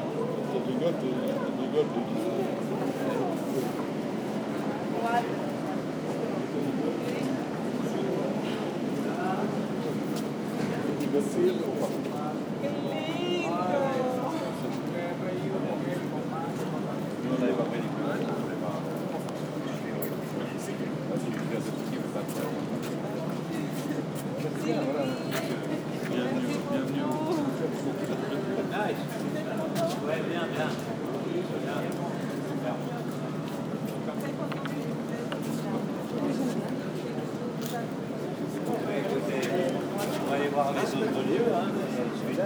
lyon - biennale d'art contemporain, stano filko preview
Lyon, France, 13 September